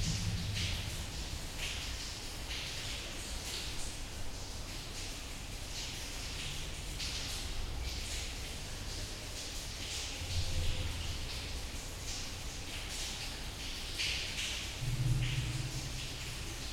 Valenciennes, France - Sewers soundscape
Soundscape of the Valenciennes sewers, while visiting an underground river called Rhonelle.